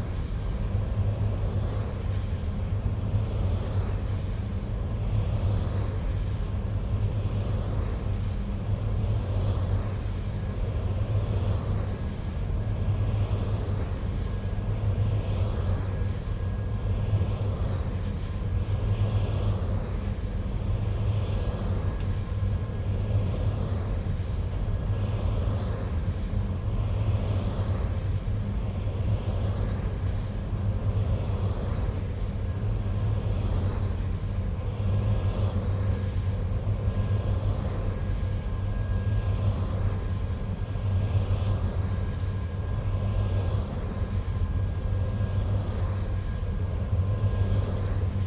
Wind farm: a rotating humming generator in the green environment, cycles of birds, weather, distance; audio stream, Bernau bei Berlin, Germany - Pure rotating air
Sounds of a wind generator - one in a farm of around 25 towers - in the flat Brandenburg countryside north of Berlin. A surprising amount of wildlife seems to be able to co-exist with the humming physical presence of these huge towers, especially a good variety of small birds whose habitat is open fields and patchy woodland, such as sky and wood larks. There are many deer. An audio stream was set up for 3 days, with mics hidden in a low bush near a hunting hide at the edge of the trees, to listen to this combination of green tech and nature. It is an 'anthropophone' (term courtesy Udo Noll) - to hear places where problematic interactions between the human and the natural are audible. Given the ever increasing demands for clean energy this rotating humming mix is likely to be the dominant sonic future in rural areas.
The generators follow the rise and fall of wind speeds and the changes of direction. Sometimes they are becalmed.
23 March 2021, 04:28